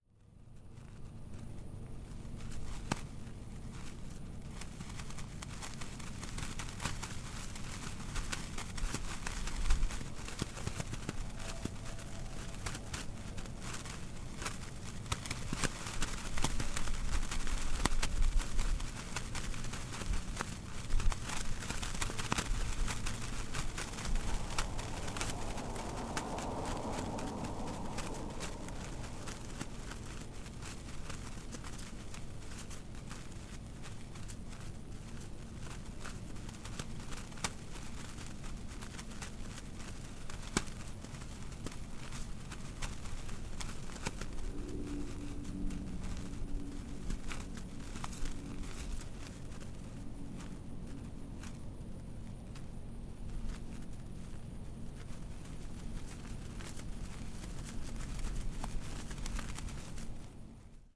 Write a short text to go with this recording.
Recording of sound from a feather flag at entrance to parking lot for REAL WC soccer field.